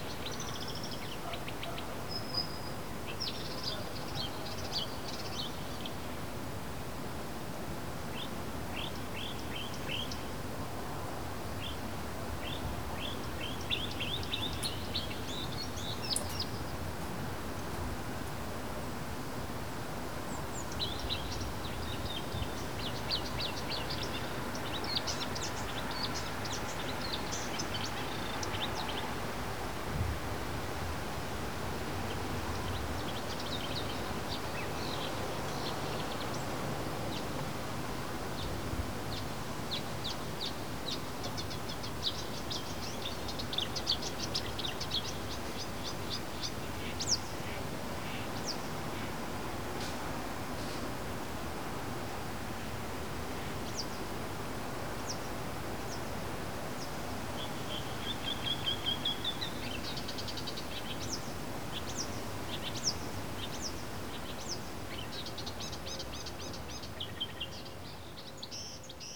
Poznan, balcony - night bird conversation
two birds engrossed in conversation late at night. the pattern very intricate, almost without repetitions. lots of hiss due to high amp gain unfortunately choking the space that was present.
25 May 2014, ~03:00